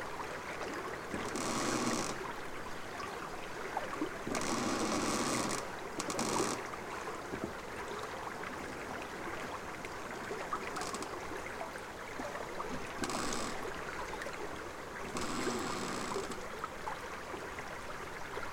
the trail of river Savasa. the place to walk with family in quarantine time